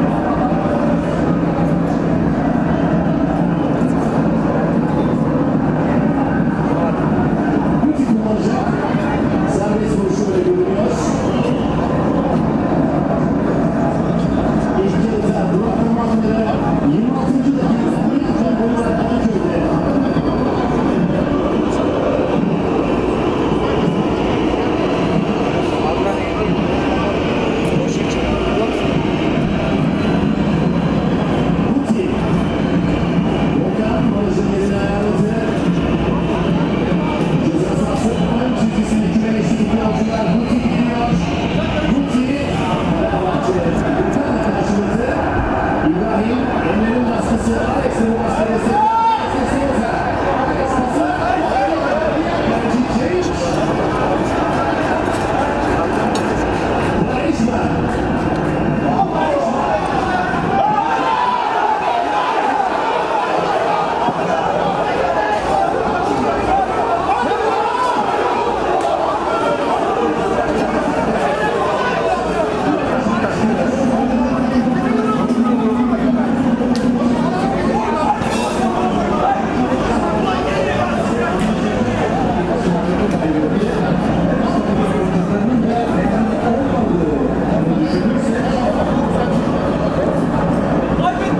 Istanbul, Besşiktaş, football fans watching the derby
Live broadcast of the football derby between Beşiktaş (this part of the city) and Fenerbahçe (other side of the Bophorus, Kadıköy). These locals support their team from this backyard, discussing a yellow card against Beşiktaş with the arbitrary on the tv screen.